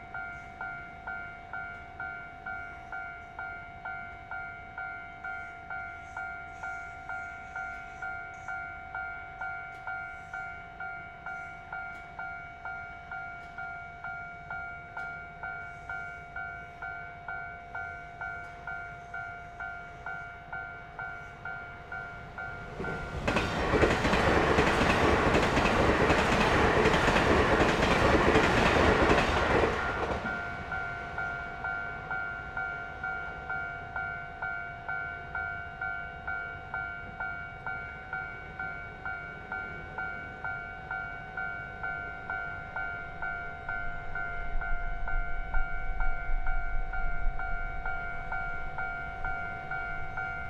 On the railroad crossing, The train runs through, Traffic sound
Zoom H6 +Rode NT4
Changhua County, Taiwan, 15 February 2017